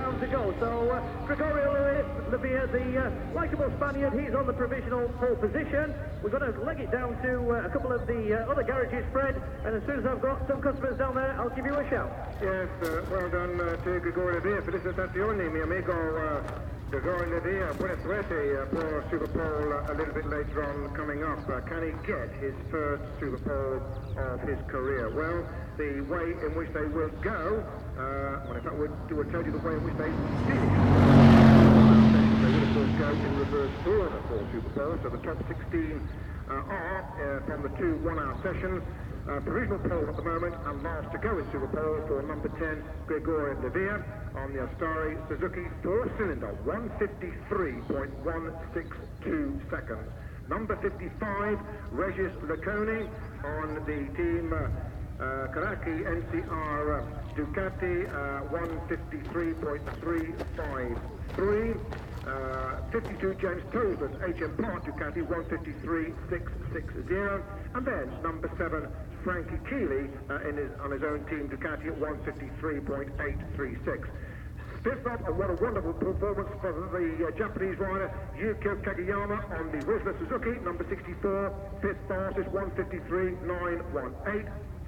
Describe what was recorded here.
World Superbikes 2003 ... Qualifying ... part two ... one point stereo mic to minidisk ...